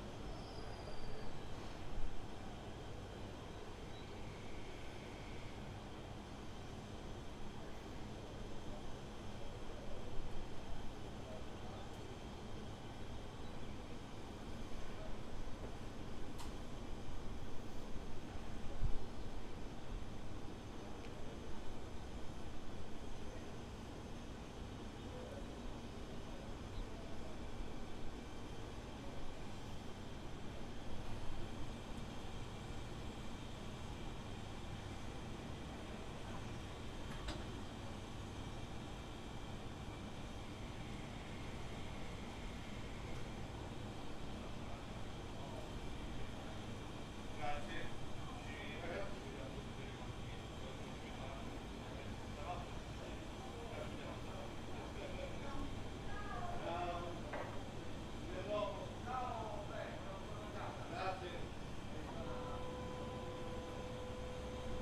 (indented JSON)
{
  "title": "Anouncements, Franzensfeste, Bozen, Italien - Station Franzensfeste",
  "date": "2018-04-28 11:50:00",
  "description": "Waiting for the train from Bologna. The anouncements in Italian and German are repetetive. A local train is coming. A train drives through the station. Birds are singing. Young students are chatting. A siren, the usual saturday noon test. Some wind. There is a cut where one train became to loud, as I hope quite audible.",
  "latitude": "46.79",
  "longitude": "11.61",
  "altitude": "750",
  "timezone": "Europe/Rome"
}